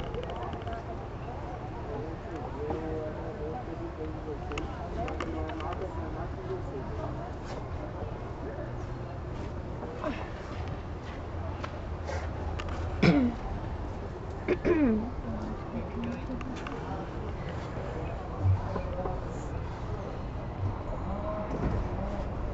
Florianópolis, SC, Brasil - School's Playground - Parquinho do Colégio
After appreciating various soundscapes on this site, we recorded the soundscape of our school's playground. The students remained silent for the first minute to record the sounds from this place and also external sounds. Then, the students started playing, recreating the sounds of children using the playground.
Depois de apreciar várias paisagens sonoras no site, gravamos a paisagem sonora do parquinho de nosso colégio. Os(as) alunos(as) permaneceram em silêncio durante o primeiro minuto para registrar os sons do ambiente e externos ao parquinho. Em seguida, os(as) estudantes começaram a brincar pelo parquinho, simulando este ambiente enquanto está sendo utilizado por crianças.